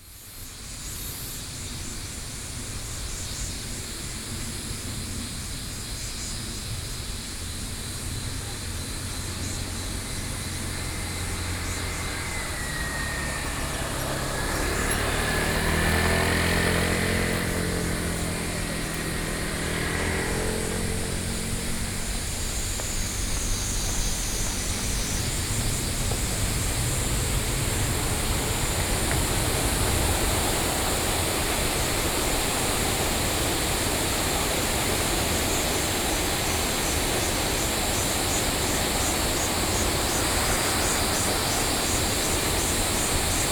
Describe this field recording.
Cicada sounds, Traffic Sound, Brook, Sony PCM D50+ Soundman OKM II